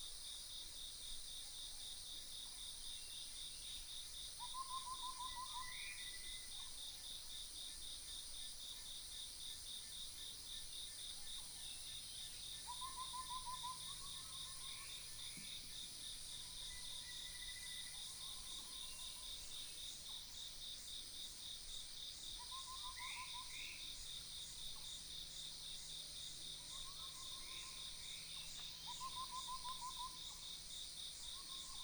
中路坑, 埔里鎮桃米里 - Bird calls
Early morning, Bird calls, Croak sounds, Dog chirping
Puli Township, Nantou County, Taiwan